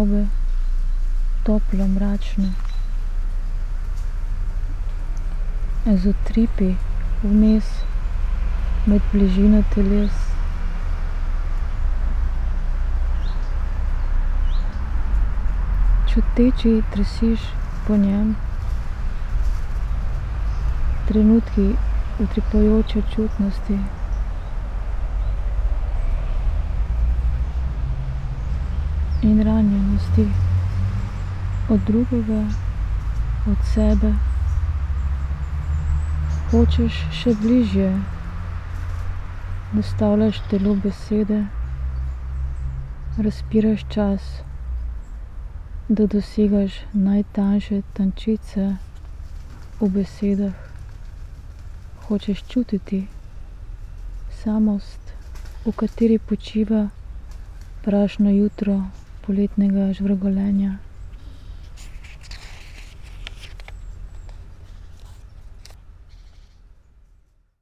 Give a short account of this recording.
sedeč v tišini mračne sobe, toplo mračne, z utripi, vmes med bližino teles, čuteči, drsiš po njem, trenutki utripajoče čutnosti, in ranjenosti, od drugega, od sebe, hočeš še bližje, nastavljaš telo besede, razpiraš čas, da dosegaš najtanjše tančice, v besedah, hočeš čutiti samost, v kateri počiva prašno jutro poletnega žvrgolenja